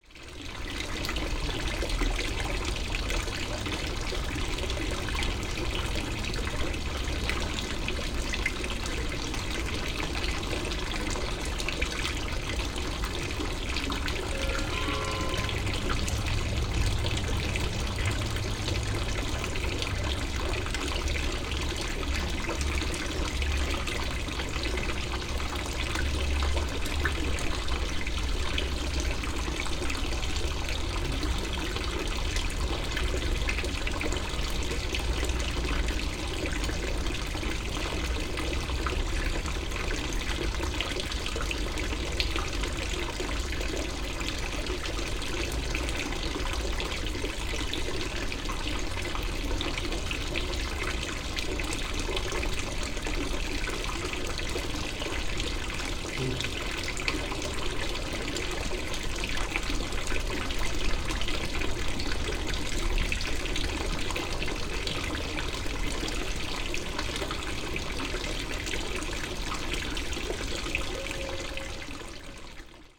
Petersgasse, Basel, Schweiz - Brunnen an der Petersgasse
fountain in the empty Petersgasse on a winter morning, a bell rings from the Peterskirche nearby